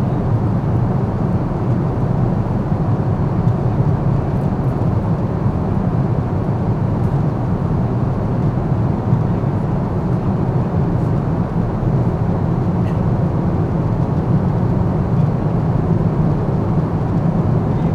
somewhere above Lake Baikal - constant noise, listening silent spaces below
whiteness with no end, thin sun line at the horizon, thousands beautifully curved river lines
10 November 2014